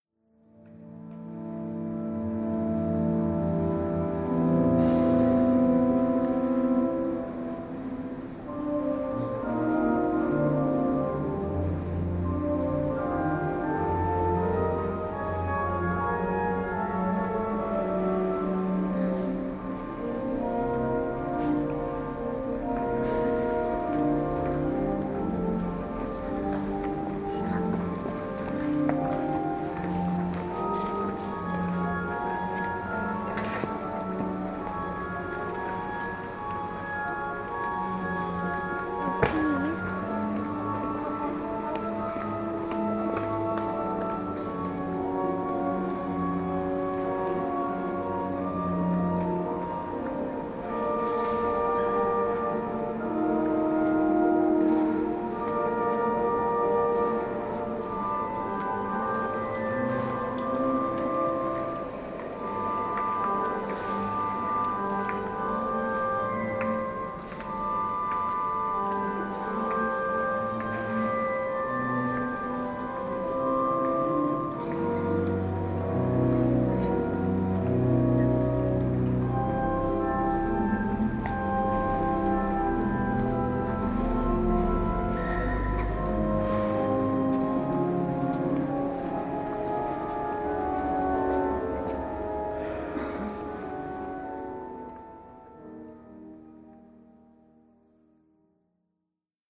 Sant Pau del Camp barcelona spain
recorded at Sant Pau del Camp church